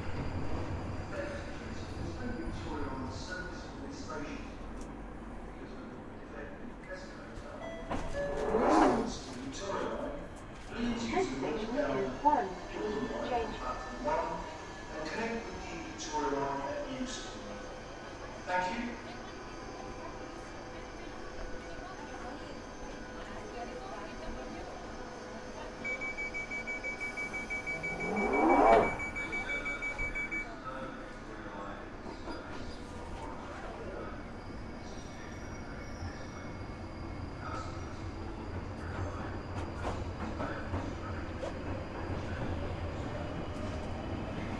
Underground from Goodge Street to Euston Stations
Travelling from Goodge Street (Tottenham Court Road) to Euston Station.